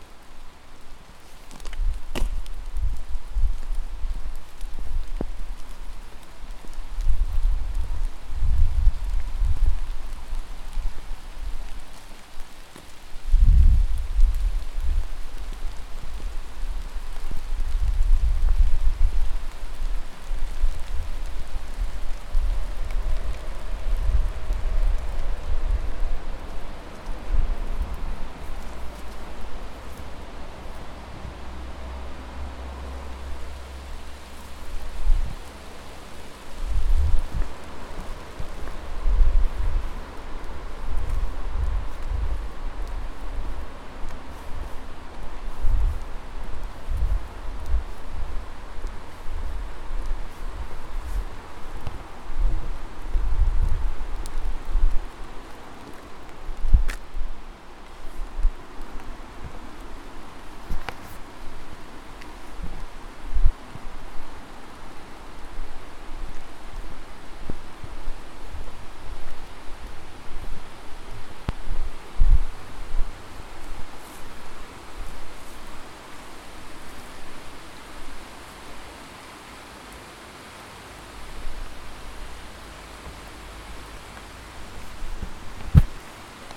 Stepney Rd, Newcastle upon Tyne, UK - under railway bridge Stepney Rd
Walking Festival of Sound
13 October 2019
walk under railway bridge in ouseburn, distant sound of metro and raindrops.